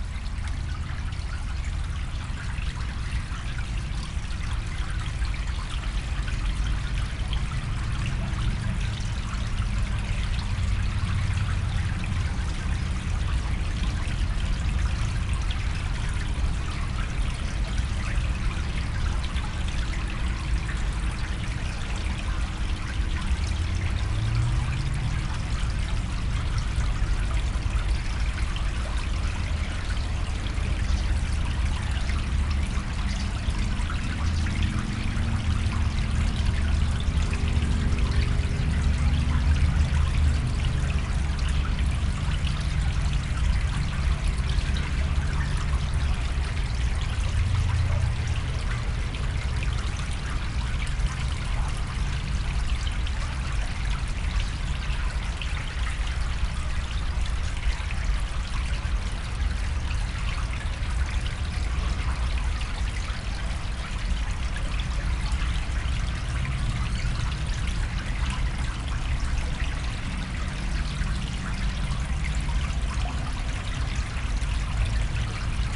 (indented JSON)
{
  "title": "Oakwood Cemetery, Austin, TX, USA - Cemetery Irrigation Duct 1",
  "date": "2015-11-01 17:10:00",
  "description": "Recorded with a pair of DPA 4060s and a Marantz PMD661",
  "latitude": "30.28",
  "longitude": "-97.73",
  "altitude": "173",
  "timezone": "America/Chicago"
}